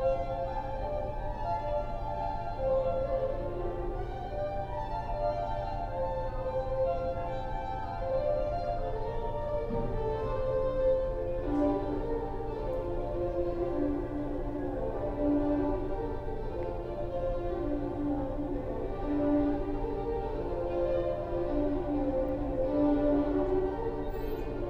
Muhlenberg College Hillel, West Chew Street, Allentown, PA, USA - Center for The Arts Stairwell
While sitting in a stairwell in the Center for The Arts I was able to hear, simultaneously, a violinist practicing in the main foyer, and two vocalists practicing a holiday song in a small, secluded room. In the midst of the recording a man runs up the starts quite rhythmically.
9 December, ~11:00